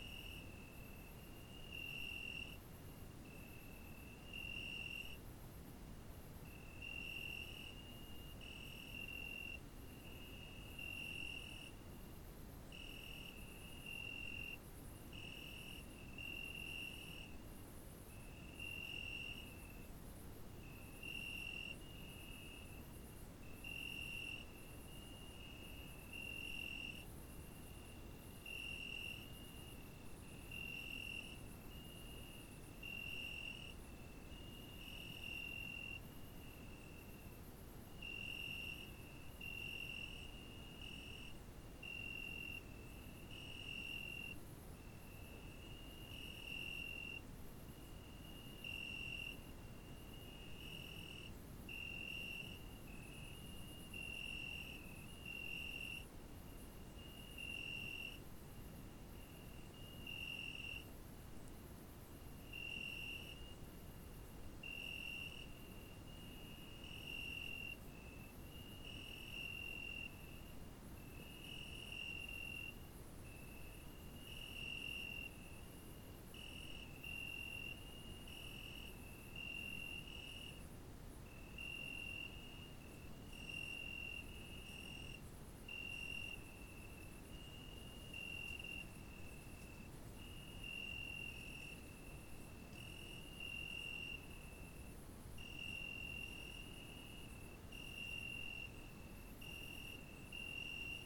La Roque-sur-Cèze, France - gri
grillons vignes grenouilles tente nuit rivière